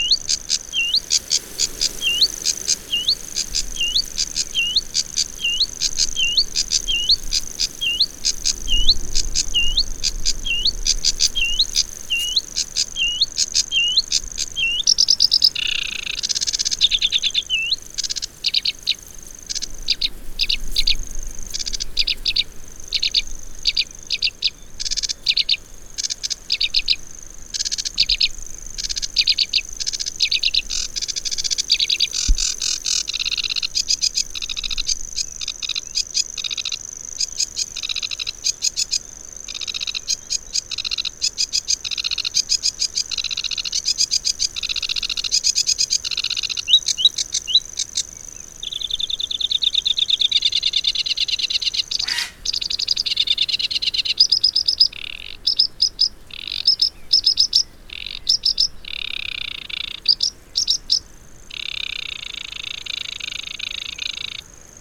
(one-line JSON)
{"title": "Argyll and Bute, UK - Reedbed songsters ...", "date": "2011-05-18 05:30:00", "description": "Reedbed songsters ... Dervaig ... Isle of Mull ... bird song from grasshopper warbler ... sedge warbler ... calls from grey heron ... common gull ... edge of reed bed ... lavaliers in parabolic ... much buffeting ...", "latitude": "56.59", "longitude": "-6.19", "altitude": "2", "timezone": "GMT+1"}